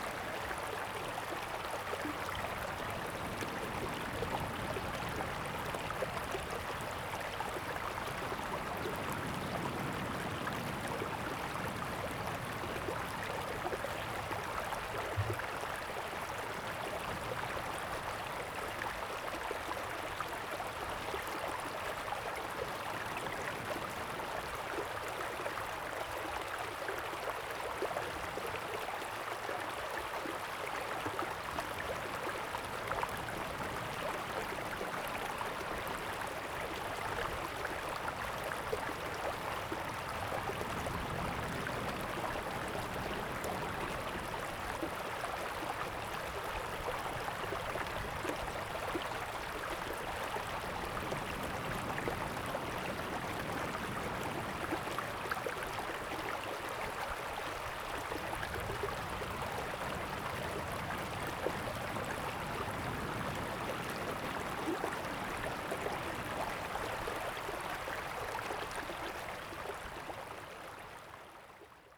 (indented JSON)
{
  "title": "Taitung City, Taiwan - Streams",
  "date": "2014-09-04 15:53:00",
  "description": "Streams close to the beach, The sound of water\nZoom H2n MS + XY",
  "latitude": "22.71",
  "longitude": "121.10",
  "altitude": "5",
  "timezone": "Asia/Taipei"
}